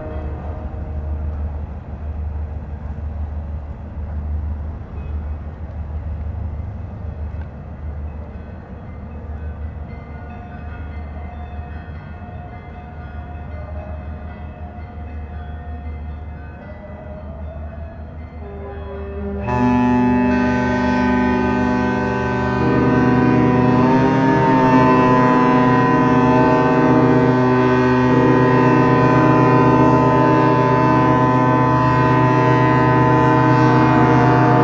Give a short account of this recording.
Ship Horn Tuning. For the Epiphany, boat make sounds their horn at the same time in the port of Athens, Greece. Recorded by a AB stereo setup B&K 4006 in Cinela Leonard windscreen, Sound Devices 633 recorder, Recorded on 6th of january 2017 in Pyraeus Port